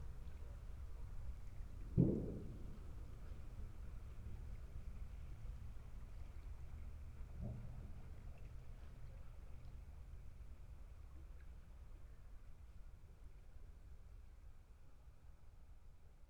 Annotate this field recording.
ambience at the oder river on new year's day nearby an abandoned railway bridge, the city, the country & me: january 1, 2015